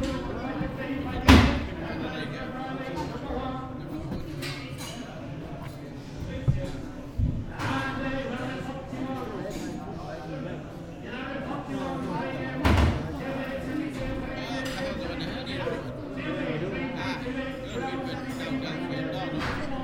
Sheep Auction, Kirkby Stephen, Cumbria, UK - Sheep auction at Kirkby Stephen auction mart
This was a recording I made in Cumbria in early 2012 for the exhibition I was making for Rheged's 'Wonder of Wool' exhibition. It features the sounds of a live sheep auction. The loud punctuating sounds are what looked like a huge cow shin bone being used as a gaffle. As you can hear, the proceedings are amplified, and the speed of the auction is impressive. I couldn't follow what was happening at all! Recorded rather craftily with Naiant X-X microphones pinned on the outside of a rucksack which I just dumped on the floor in front of me. Not great quality but gives some sense of the rhythm and pace at one of these events.